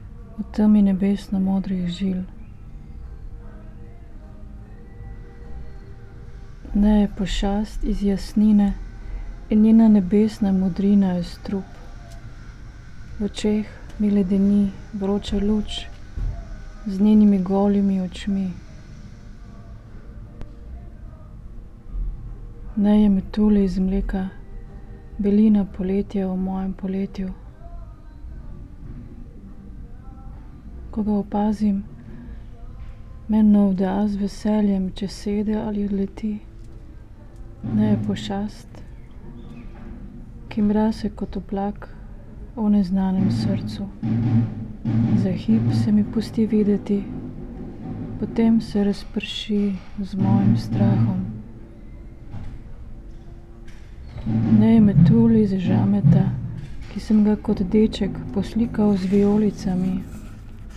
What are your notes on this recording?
reading poem Pošast ali Metulj? (Mostru o pavea?) by Pier Paolo Pasolini